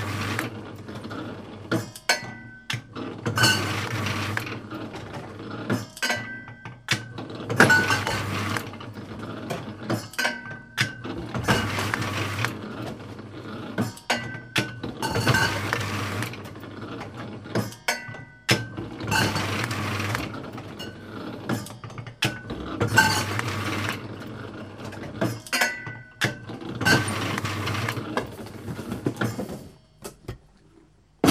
Murvica Zapad, Brewery Brlog, Murvica, Croatia - Brewery
putting labels on bottles and packing them in boxes